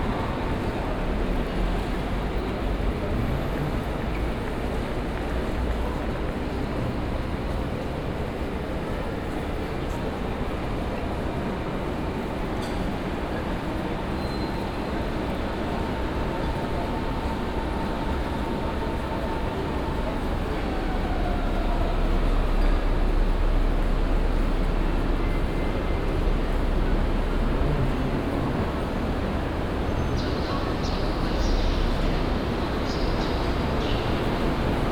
berlin main station, hall - walk, lowest to highest level
binaural recording of a movement from the lowest platforms to the top level of the station.
Berlin, Germany, 15 August 2010